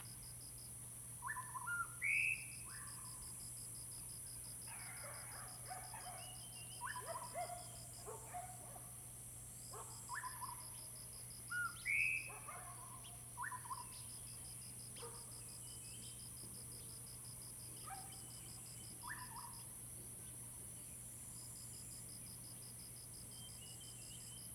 Hualong Ln., 埔里鎮桃米里 - Bird calls
Bird sounds, Dogs barking
Zoom H2n MS+XY